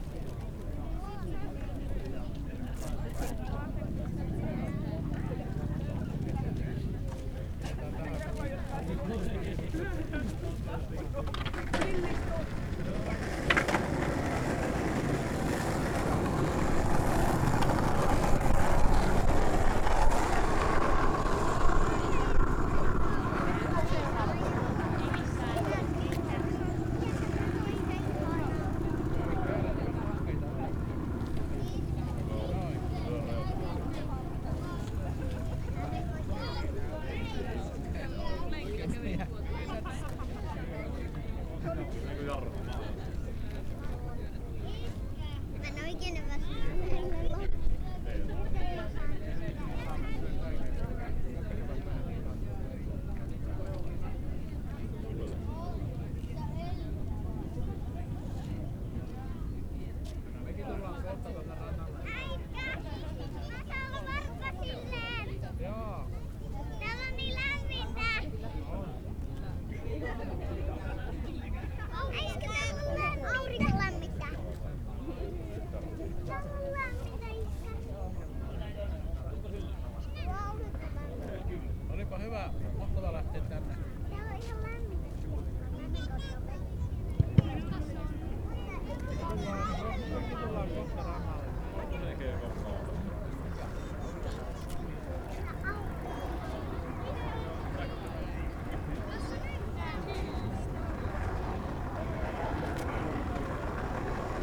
People hanging around a ice cream stand at Nallikari beach during the first proper summer weekend of 2020. Zoom H5 with default X/Y module.
Pohjois-Pohjanmaa, Manner-Suomi, Suomi, 24 May 2020